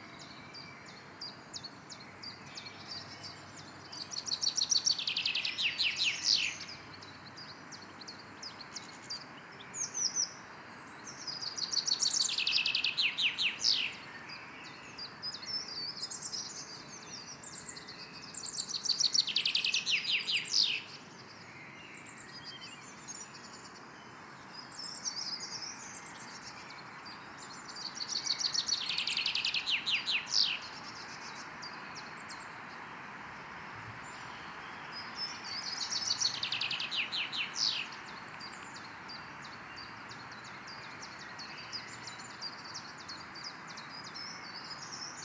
OHRBERG PARK, Hameln - Bird Sounds (Sound Recording Of Birds In The Park)
Nice sunny and warm afternoon in the Ohrberg Park and birds were very happy and their voices show that! Mostly in the park are "Common Chaffinch & Willow Warbler" birds.
Tascam DR100-MKIII Handheld Recorder
MikroUSI Omni directional Stereo Matched Microphones
Landkreis Hameln-Pyrmont, Niedersachsen, Deutschland, 20 April 2021, 12:07